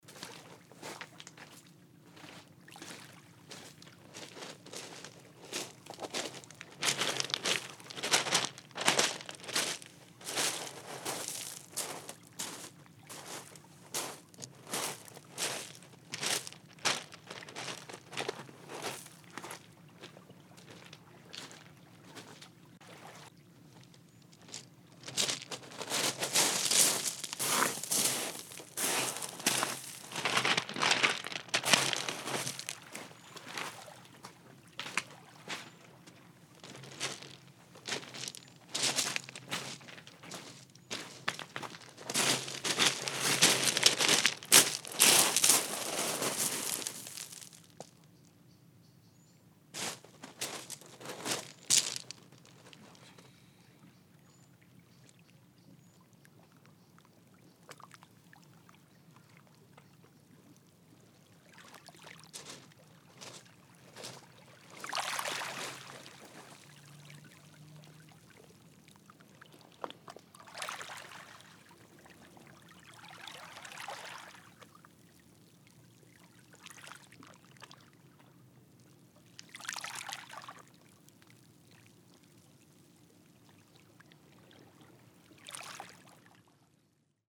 Costabela, Rijeka, walking on beach
walking on beach.
recording setup: M/S (Sony stereo condenser via Sony MD @ 44100KHz 16Bit